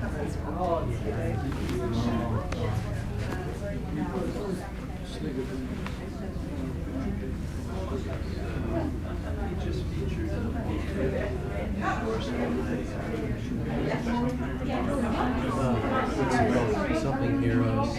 Art Gallery on the Atlantic Ocean - Voices
Voices heard during an art event in the gallery of a liner during an Atlantic crossing.. MixPre 3, 2 x Beyer Lavaliers.